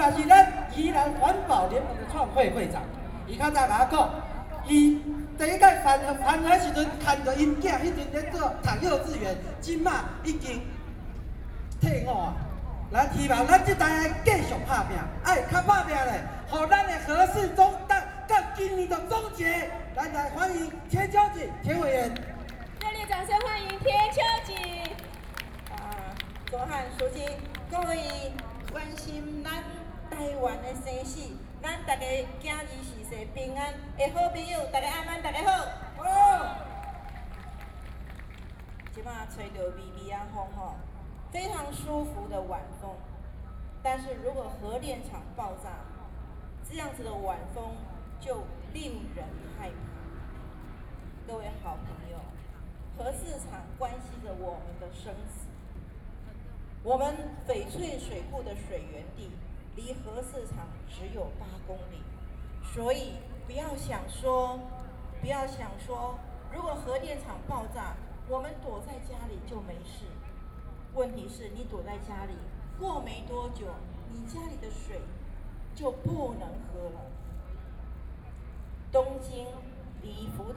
Ketagalan Boulevard, Taipei - speech
against nuclear power, Lawmakers are speech, Sony PCM D50 + Soundman OKM II